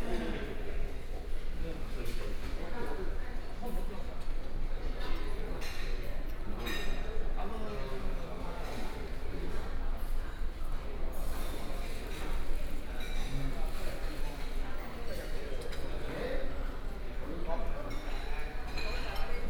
華王飯店, Kaoshiung City - in the Hotel
In the hotel lobby